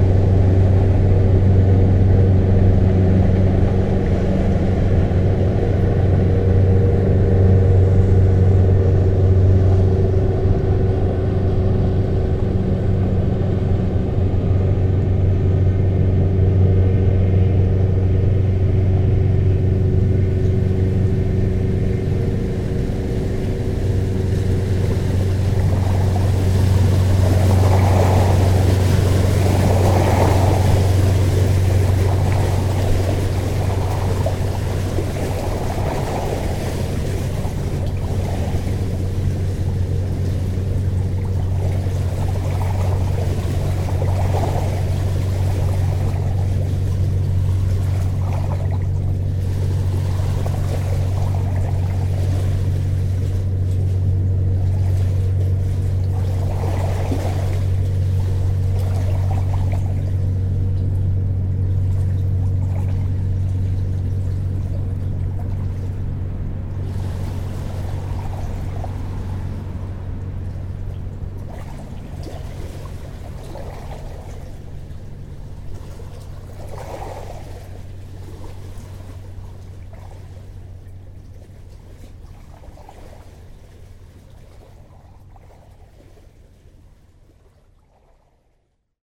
La Grande-Paroisse, France - Boat on the Seine river
Sound of the river flowing and a boat passing by on the Seine river.